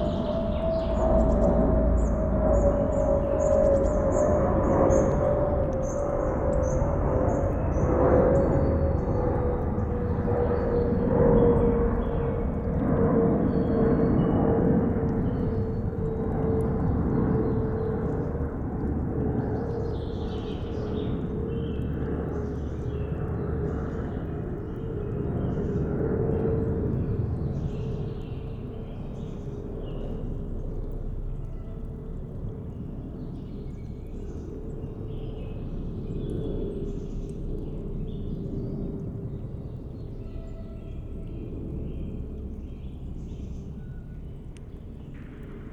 Ahrensfelde, Deutschland - water flow, forest ambience, aircraft
source of the river Wuhle, light flow of water, spring forest ambience, an aircraft
(SD702, AT BP4025)